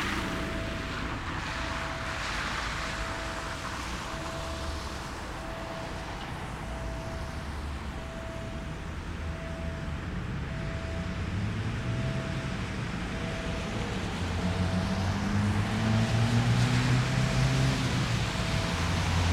I often pass near railroad tracks and I regret not having my recorder with me, but this time, I did. There is a sense of expectative given by the sound signal of the barrier. The train is just two carriages long, so there isn't much rhythmic track noise, but...finally got it :) Recorded with Superlux S502 Stereo ORTF mic and a Zoom F8 recorder.